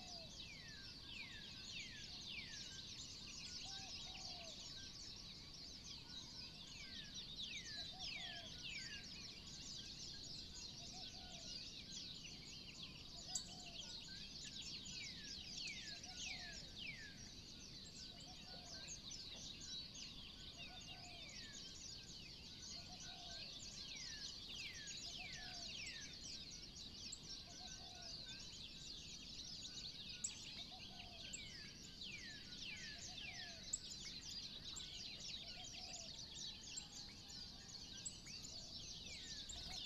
Kapoho Road, Big Island, Hawaii, USA
morning wake up call from birds around the house